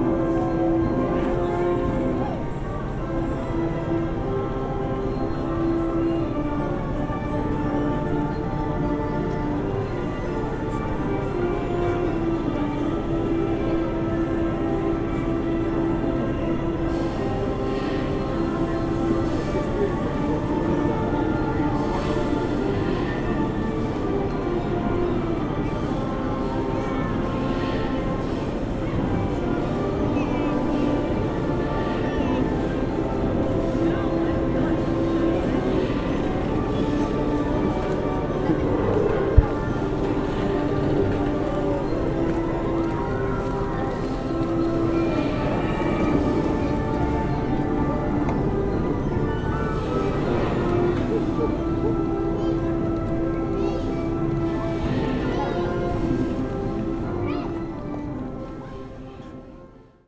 Dorney Park and Wildwater Kingdom, Dorney Park Road, Allentown, PA, USA - The Sunken Hum Broadcast 169 - Merry Go Round and Distant Rollercoaster Screams - 18 June 2013
There's something slightly maddening about the music from a Merry Go Round. The sounds of a day out in Dorney Park, PA.